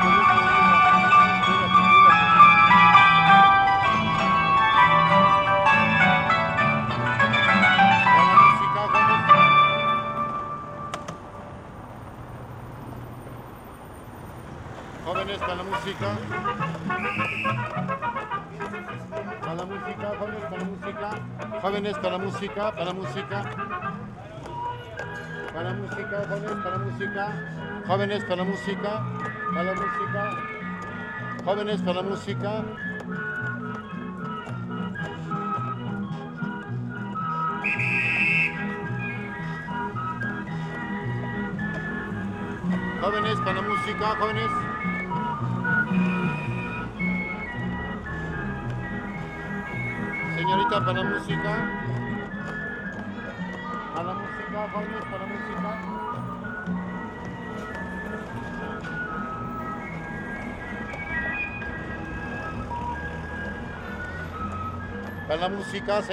de Mayo, Centro histórico de Puebla, Puebla, Pue., Mexique - Puebla - 5 de Mayo
Puebla - Mexique
À l'entrée de 5 de Mayo il interpèle les passants avec un faux orgue de barbarie.